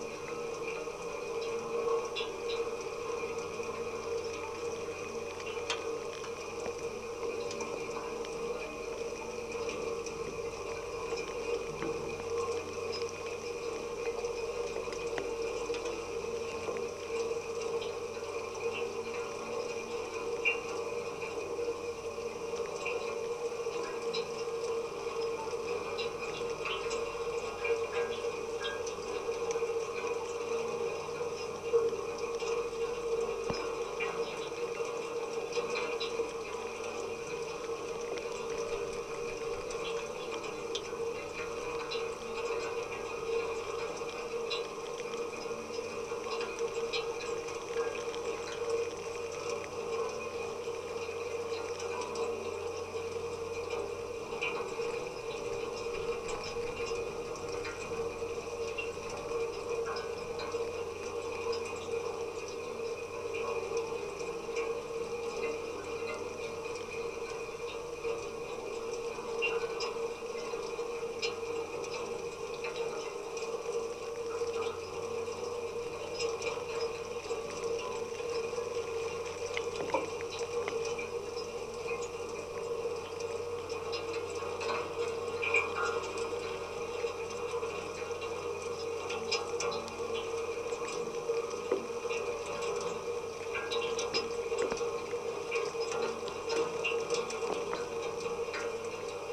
Urban, Slovenia - rain on church lightning rod
rain falls and gutters resonate through a lighting rod attached to a small church on the hill in urban. recorded with contact microphones.
Maribor, Slovenia, 1 September 2012